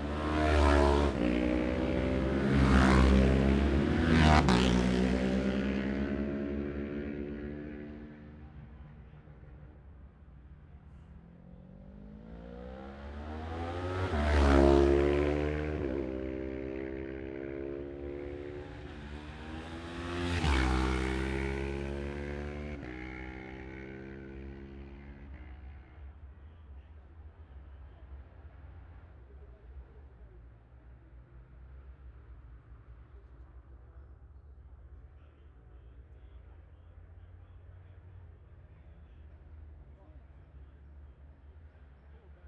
Jacksons Ln, Scarborough, UK - olivers mount road racing 2021 ...
bob smith spring cup ... twins group B qualifying ... luhd pm-01 mics to zoom h5 ...
22 May